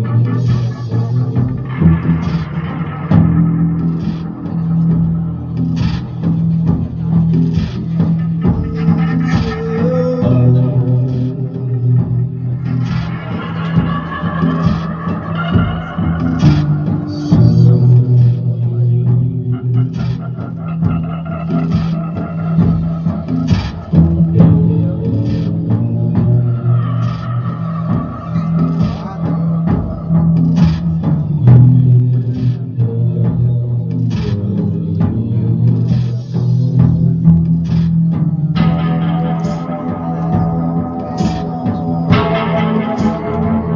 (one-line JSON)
{"title": "Concert at Der Kanal, Weisestr. - Der Kanal, Season of Musical Harvest: STRANGE FORCES", "date": "2010-09-11 21:25:00", "description": "We are bringing the crops in, the Season of Musical Harvest is a happy season. This one was quite psychedelic: STRANGE FORCES is a Berlin based Band from Australia, we hear one song of their mood driving music.", "latitude": "52.48", "longitude": "13.42", "altitude": "60", "timezone": "Europe/Berlin"}